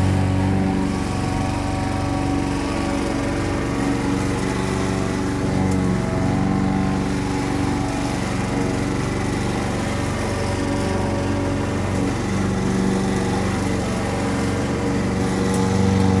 lawn-mower, propach
recorded june 30th, 2008.
project: "hasenbrot - a private sound diary"